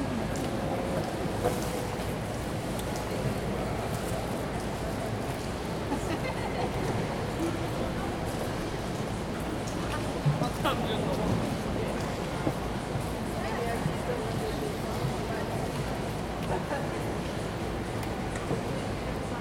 Budapest, Kerepesi út, Hungary - Keleti Railway Station - Interior Acoustics Pt. 01
A soundwalk inside the Keleti Railway Station highlighting the extraordinary architectural acoustics of this massive structure. This recordings were originally taken while waiting for the Budapest --> Belgrade night connection. Recorded using Zoom H2n field recorder using the Mid-Side microhone formation.